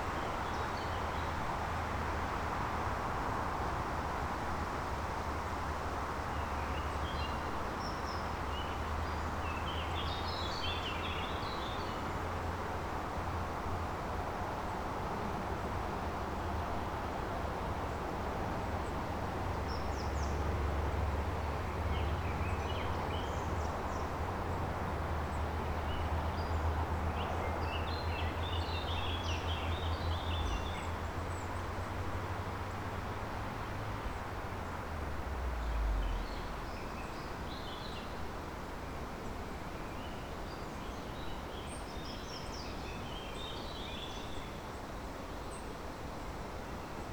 burg/wupper, burger höhe: evangelischer friedhof - the city, the country & me: protestant cemetery
windy afternoon, wind rustling through leaves, traffic noise of L 407
the city, the country & me: june 18, 2011